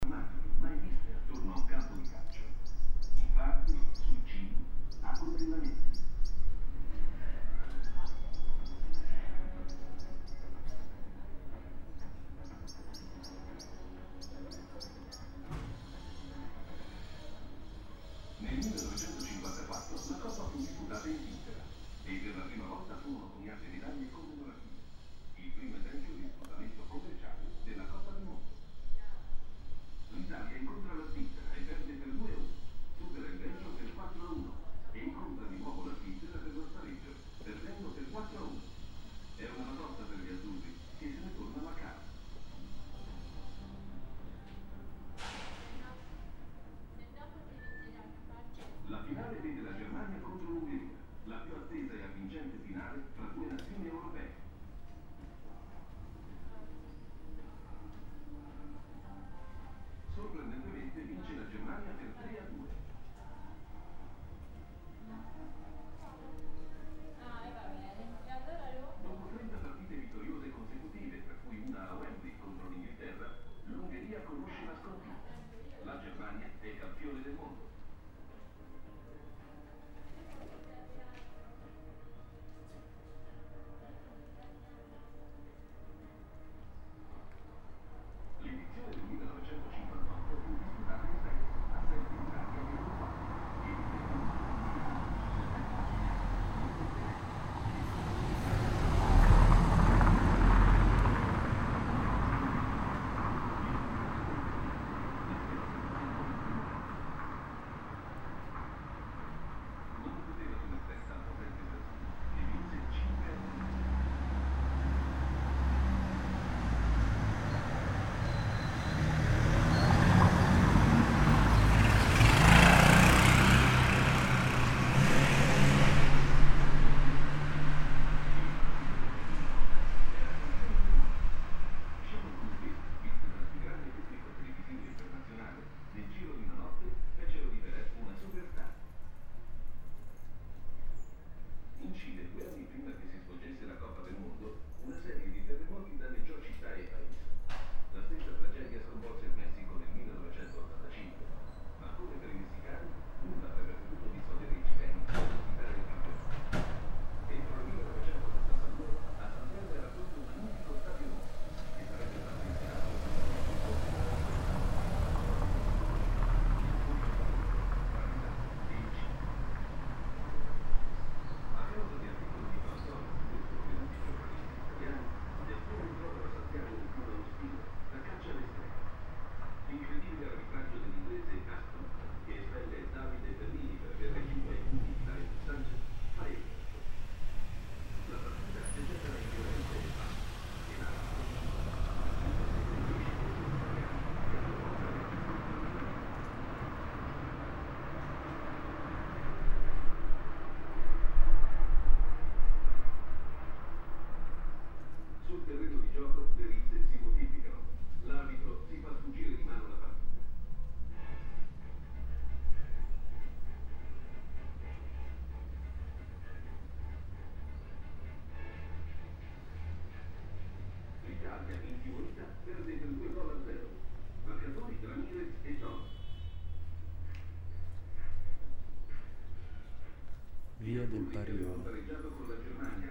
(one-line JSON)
{"title": "Perugia, Italy - italian television from a window", "date": "2014-05-23 12:50:00", "description": "italian television program about historical soccer. birds. traffic. ambience of the street.", "latitude": "43.11", "longitude": "12.39", "altitude": "443", "timezone": "Europe/Rome"}